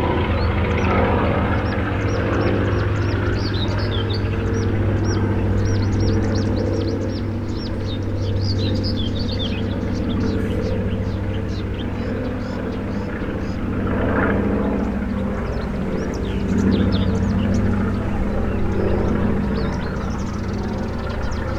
engine rumble of a sightseeing plane making a circle over Sobieskiego housing district.